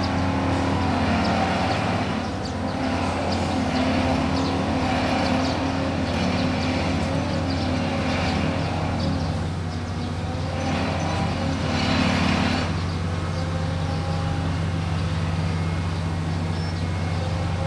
新莊國民運動中心, Xinzhuang Dist., New Taipei City - Construction noise
Construction noise, Birds singing
Sony Hi-MD MZ-RH1 +Sony ECM-MS907